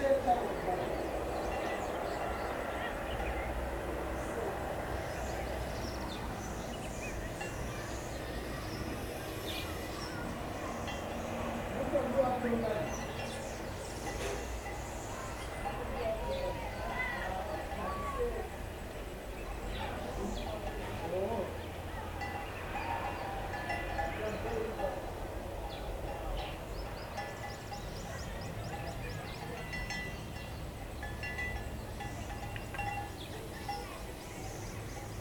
Tusimpe dorm, Binga, Zimbabwe - a quiet morning...
… starting from September, we were having electricity cuts three times a week for a full day… and if there were storms somewhere, the cuts may be more or longer… (apparently, the wooden poles in the area were replaced to prepare for the rainy season; that’s what we were told…)
when I heard the sounds of the wheelbarrow (bringing a car battery), I knew what was coming next… and what would accompany us for the “rest” of the day. Here, and at the office (given, there was fuel…)...
17 November 2016, 06:25